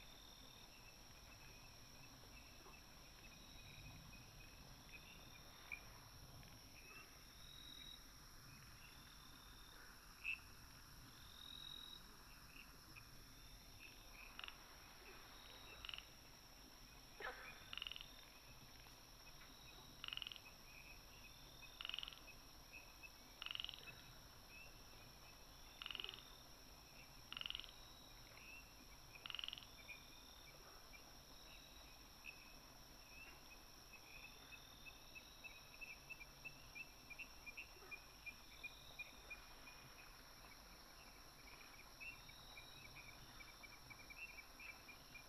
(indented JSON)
{
  "title": "Yuchi Township, Nantou County - Firefly habitat area",
  "date": "2015-04-29 19:05:00",
  "description": "Dogs barking, Frogs chirping, Firefly habitat area",
  "latitude": "23.93",
  "longitude": "120.90",
  "altitude": "756",
  "timezone": "Asia/Taipei"
}